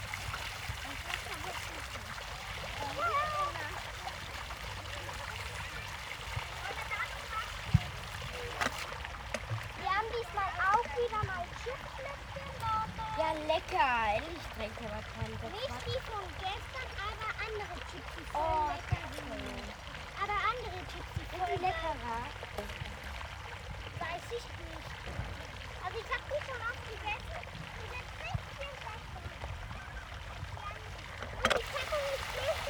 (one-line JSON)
{"title": "Weikerlseestraße, Linz, Austria - Children direct and play with water flows. Its intense", "date": "2020-09-05 15:02:00", "description": "This hillside has been constructed to channel water downwards from a pumped source at the top. The channels can be blocked by small sluice gates that dam the water behind them. Children get really serious about controlling the water flow, lifting the gates to send it in different directions, waiting for enough to build up before releasing to the next level and planning moves into the future. Arguments over what to do and who is to do it, get pretty heated. So adult.", "latitude": "48.26", "longitude": "14.36", "altitude": "249", "timezone": "Europe/Vienna"}